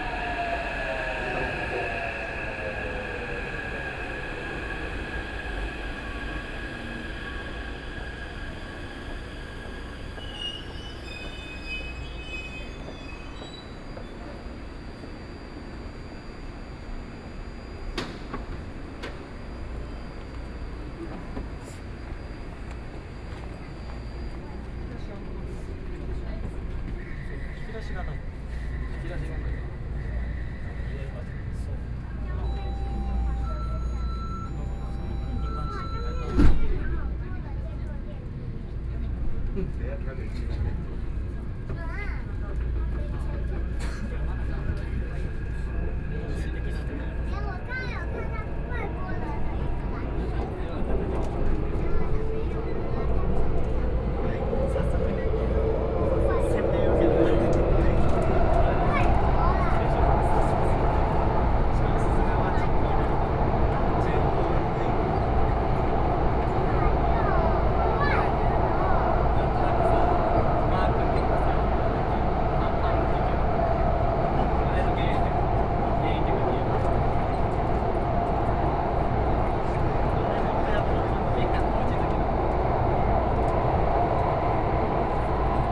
Chiang Kai-Shek Memorial Hall Station, Taipei - soundwalk
Walking into the MRT, Through the underpass, Waiting for the train platform to the MRT, Sony PCM D50 + Soundman OKM II